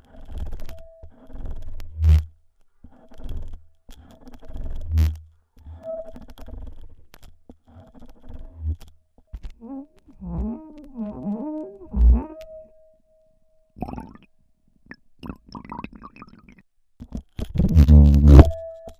Teufelsberg, On top of his wine glass - On top of his wine glass
Wine glasses should never be filled more than half-way..
(Wine glasses, Dusan, Luisa, Me, contact microphones borrowed from John)
Germany, 5 February 2010